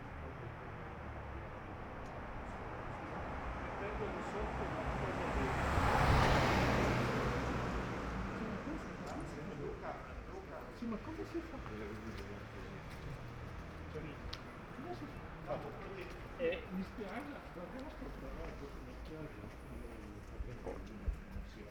"It’s seven o’clock with bells on Wednesday in the time of COVID19" Soundwalk
Chapter XLVI of Ascolto il tuo cuore, città. I listen to your heart, city
Wednesday April 15th 2020. San Salvario district Turin, walking to Corso Vittorio Emanuele II and back, thirty six days after emergency disposition due to the epidemic of COVID19.
Start at 6:53 p.m. end at 7:21 p.m. duration of recording 28’09”
The entire path is associated with a synchronized GPS track recorded in the (kmz, kml, gpx) files downloadable here:
Piemonte, Italia, 15 April 2020, 18:53